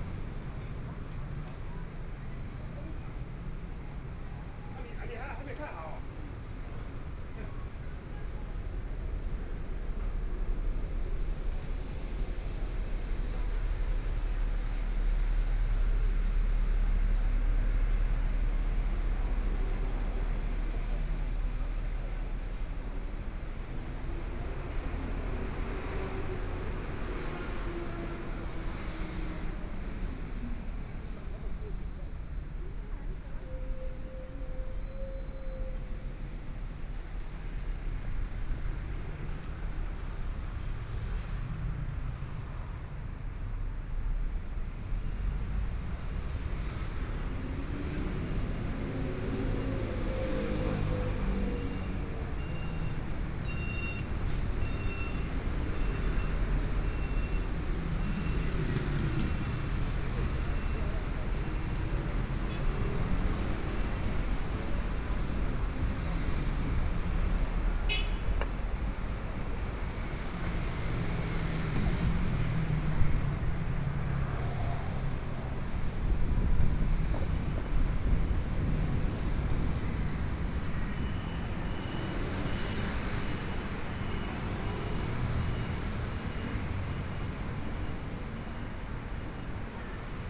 Zhongshan N. Rd., Zhongshan Dist - walking on the Road
Walking on the road （ZhongShan N.Rd.）from Nanjing W. Rd. to Chang'an W. Rd., Traffic Sound, Binaural recordings, Zoom H4n + Soundman OKM II
20 January 2014, 13:11